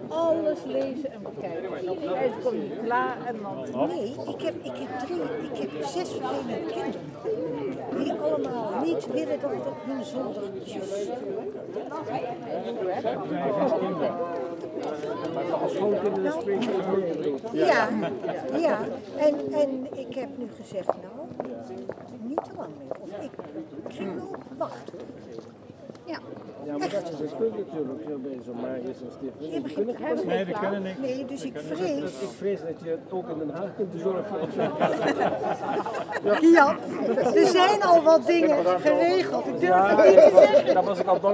Maastricht, Pays-Bas - Uninvited to a wedding
Het Vagevuur. After a wedding, a small group of elderly people discuss. They are stilted. The atmosphere is soothing and warm.